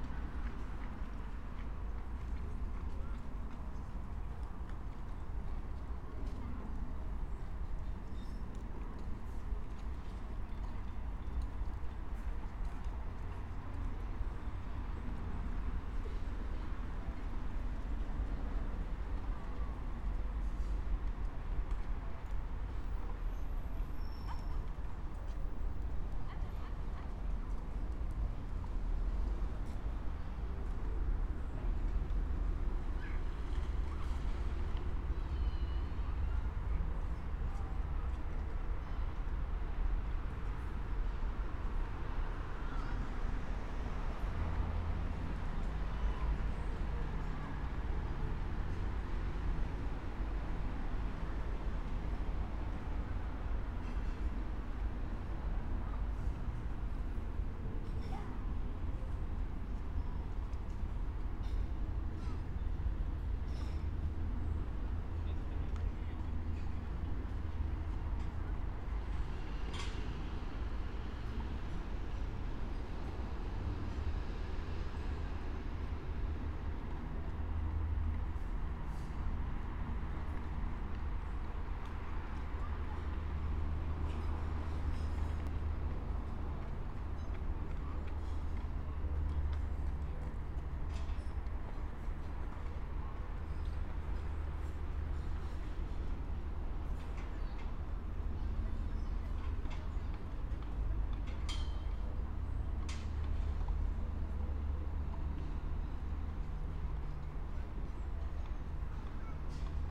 {"date": "2022-08-24 12:45:00", "description": "12:45 Brno, Lužánky - late summer afternoon, park ambience\n(remote microphone: AOM5024HDR | RasPi2 /w IQAudio Codec+)", "latitude": "49.20", "longitude": "16.61", "altitude": "213", "timezone": "Europe/Prague"}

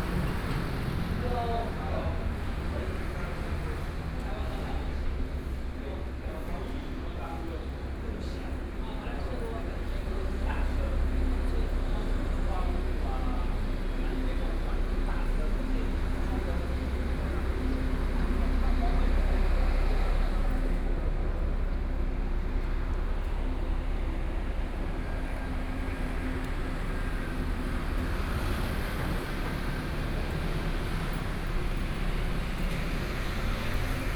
{"title": "Daren Rd., Yancheng Dist. - walking on the Road", "date": "2014-05-14 07:31:00", "description": "In the morning, walking on the Road, Traffic Sound, Birdsong, Tourists", "latitude": "22.62", "longitude": "120.29", "altitude": "15", "timezone": "Asia/Taipei"}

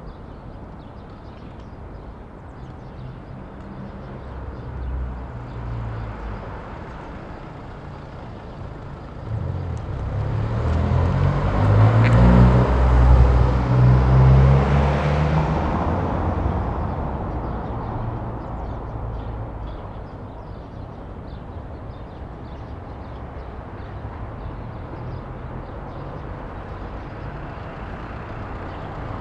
{"title": "Berlin: Vermessungspunkt Friedelstraße / Maybachufer - Klangvermessung Kreuzkölln ::: 02.03.2011 ::: 09:45", "date": "2011-03-02 09:45:00", "latitude": "52.49", "longitude": "13.43", "altitude": "39", "timezone": "Europe/Berlin"}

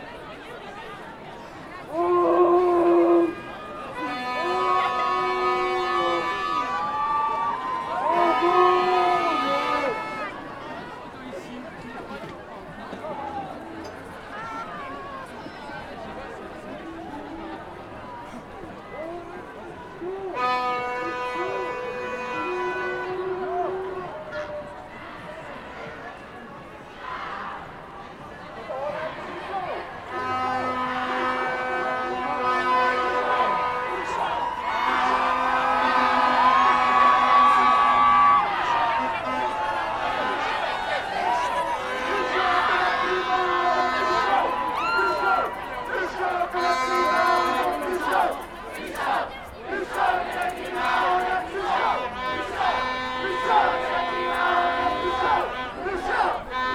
{"title": "Maurice Lemonnierlaan, Brussel, België - Climate protests", "date": "2019-01-31 12:25:00", "description": "What do we want? CLIMATE JUSTICE! When do we want it? NOW", "latitude": "50.84", "longitude": "4.34", "altitude": "20", "timezone": "GMT+1"}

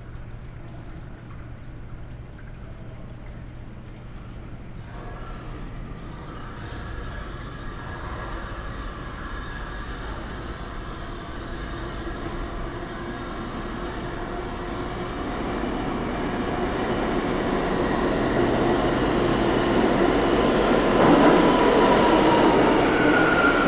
{"title": "Chambers St, New York, NY, USA - A-train on a empty station, covid 19", "date": "2020-04-03 09:20:00", "description": "Recording of chambers street train stop during covid-19.\nThe station had only a few passengers waiting for the train.\nThere was a cavernous atmosphere with sounds echoing and rainwater running on the tracks.", "latitude": "40.72", "longitude": "-74.01", "altitude": "17", "timezone": "America/New_York"}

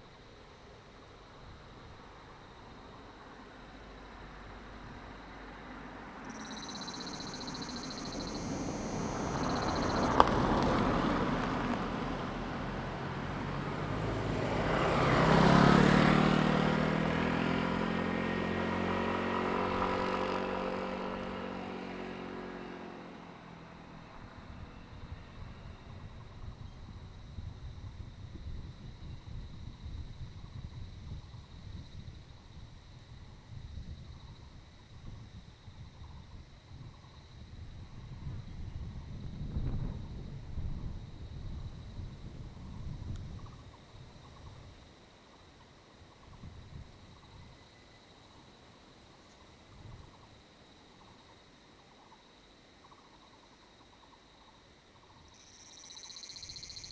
{"title": "牡丹鄉199縣道7.5K, Mudan Township - Bird and Cicadas", "date": "2018-04-02 11:06:00", "description": "Bird song, Cicadas cry, Small mountain road, Close to the Grove, traffic sound\nZoom H2n MS+XY", "latitude": "22.21", "longitude": "120.87", "altitude": "351", "timezone": "Asia/Taipei"}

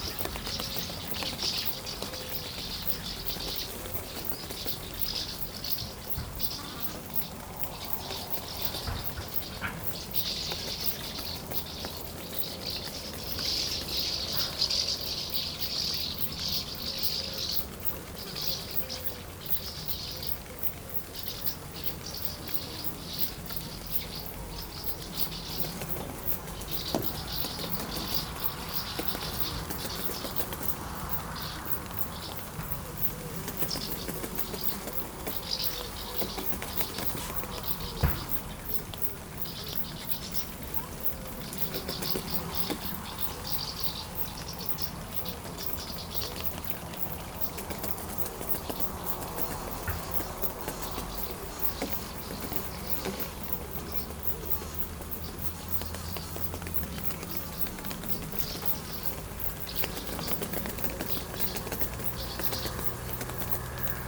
{"title": "Lombron, France - Greenhouses", "date": "2017-08-14 20:20:00", "description": "The Lombron farm is a huge gardening farm, producing a gigantic diversity of vegetables. Into the greenhouses, there's a lot of insects, prisonners into the tarpaulins. These insects try to go out, it makes the innumerable poc-poc sounds on the transparent tarpaulins. Outside, swallows wait, and regularly catch every insect going out.", "latitude": "48.09", "longitude": "0.40", "altitude": "81", "timezone": "Europe/Paris"}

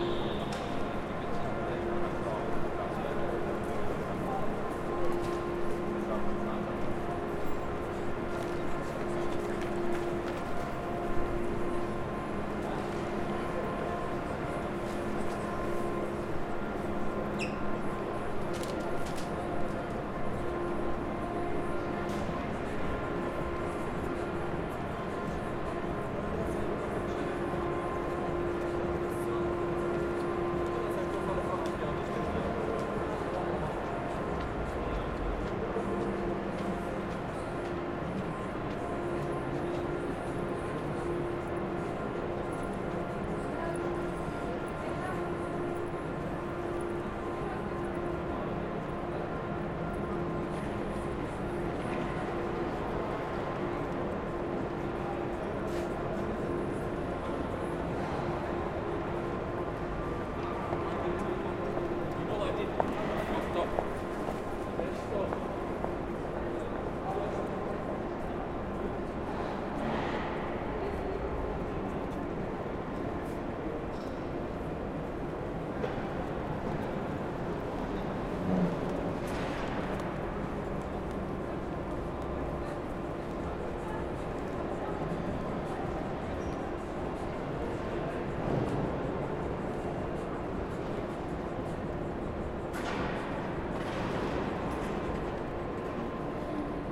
{"title": "Frankfurt Hauptbahnhof 1 - 200515 Bahnhofshalle nach Öffnung", "date": "2020-05-15 13:20:00", "description": "The lockdown is over since two weeks, the station is still no tas busy as it was, but many more people are crossing the great hall before they enter the platforms. People are buying flowers and talking on the phone without masks...", "latitude": "50.11", "longitude": "8.66", "altitude": "110", "timezone": "Europe/Berlin"}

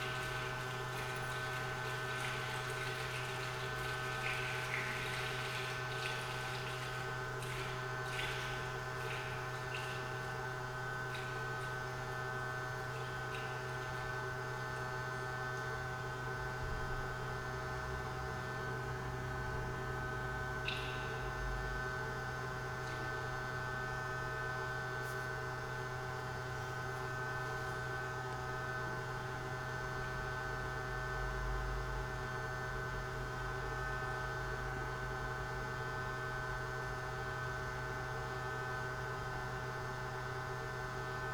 {"title": "Poznan, underground parking lot - garage ambience", "date": "2012-08-12 14:23:00", "description": "sound ambience in the underground parking lot in apartment building. a power box resonates the whole area. water flowing sewage pipes, hum form ventilation ducts. at the end of the recording there is a noticeable crack. it's crack of cartilages in my ankle when i moved my foot :)", "latitude": "52.46", "longitude": "16.90", "altitude": "97", "timezone": "Europe/Warsaw"}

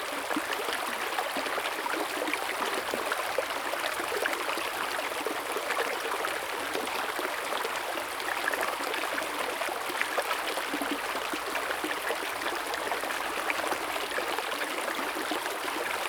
{"title": "上種瓜坑, 成功里 Puli Township - Upstream", "date": "2016-04-28 10:38:00", "description": "Sound of water, Small streams, Streams and Drop\nZoom H2n MS+XY", "latitude": "23.96", "longitude": "120.89", "altitude": "464", "timezone": "Asia/Taipei"}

